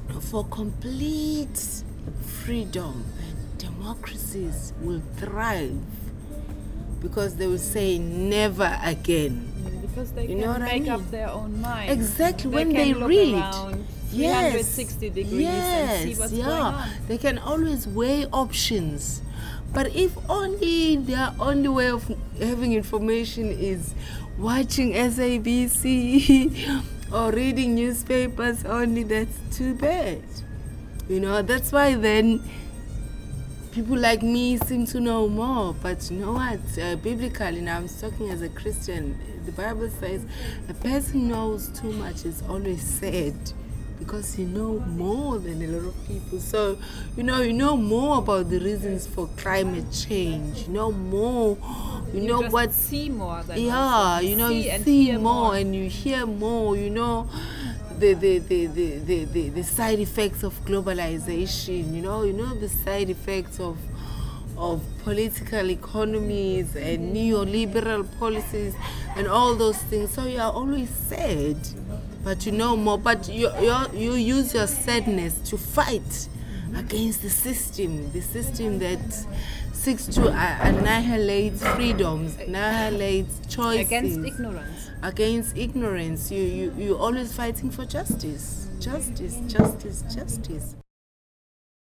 Bat Centre, South Beach, Durban, South Africa - Faith for complete freedom...
The terrace of the BAT centre. Overlooking Durban's port. Jazz form the Cafe Bar. And April's elections in South Africa approaching. The Durban poet, writer and activist Faith ka-Manzi talks about her vision of complete freedom and her wish for political education of young people. Attitudes of "don't say a word!" are lingering not only in old township stories; which, none the less, she also tells. Faith ka-Manzi has been engaged in numerous political campaigns and is particularly concerned about issues of gender and sexual rights.
22 February 2009, ~14:00